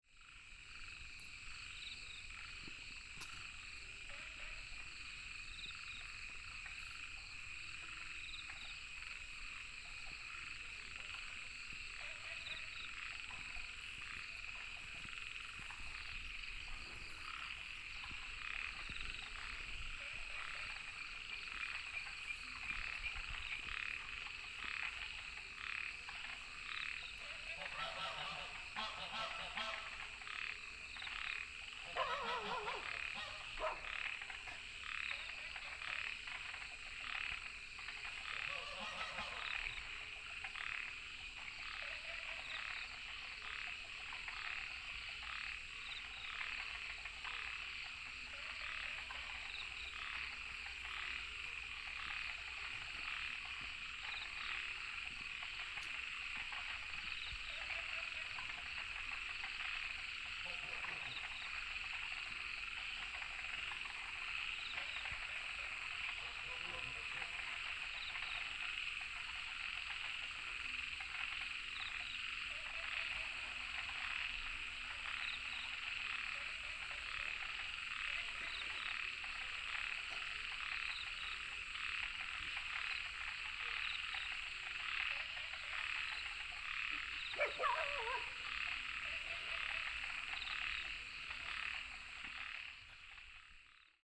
Dogs barking, Frogs chirping, Ecological pool, Aircraft flying through, Goose calls